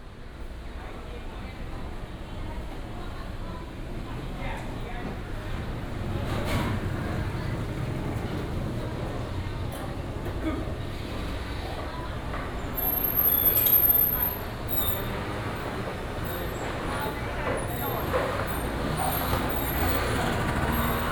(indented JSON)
{
  "title": "鳳山第一公有零售市場, Kaohsiung City - in traditional market",
  "date": "2018-03-30 10:19:00",
  "description": "Walking in traditional market blocks, motorcycle",
  "latitude": "22.62",
  "longitude": "120.36",
  "altitude": "14",
  "timezone": "Asia/Taipei"
}